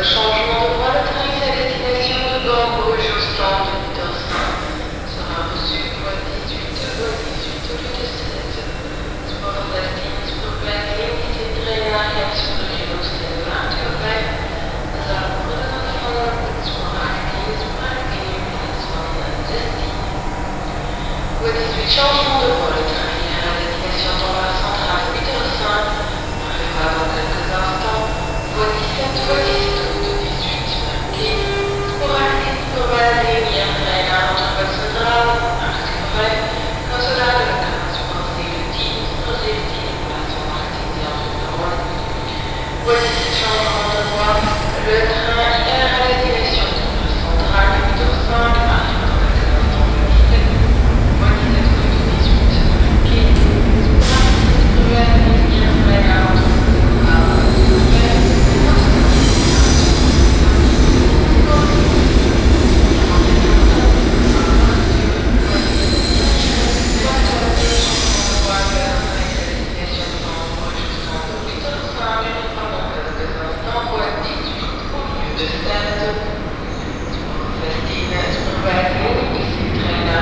Bruxelles, gare du Midi, Voie 3 / brussels, Midi Station, platform 3. A symphony for trains and a lady speaking in the microphone.